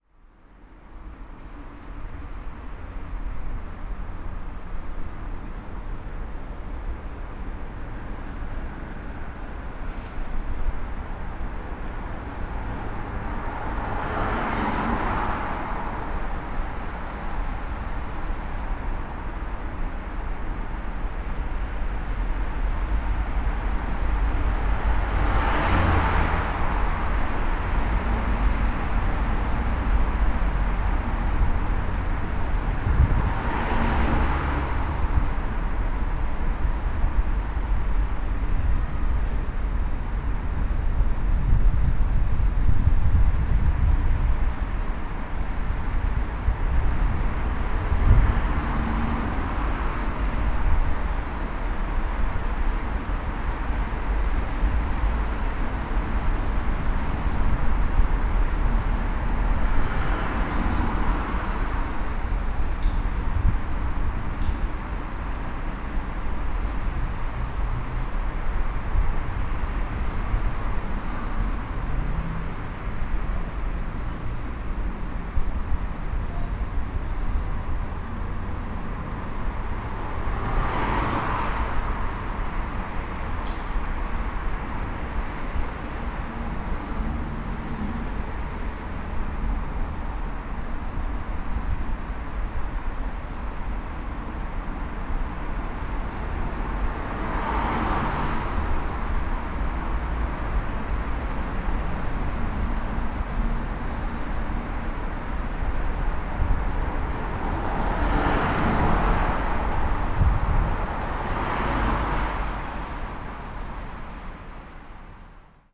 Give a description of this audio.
Recorded with a stereo pair of DPA 4060s and a Marantz PMD661.